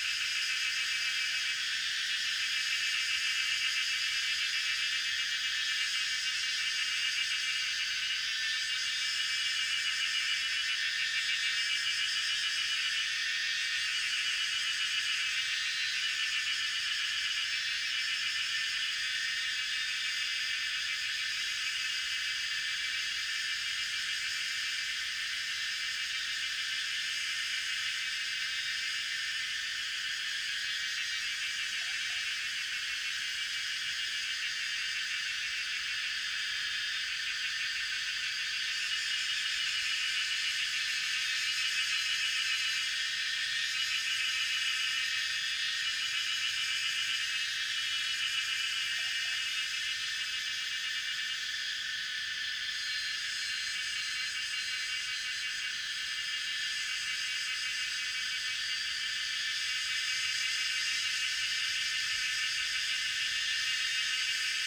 三角崙, 埔里鎮, Taiwan - in the woods
Cicada sounds, in the woods
Zoom H2n MS+XY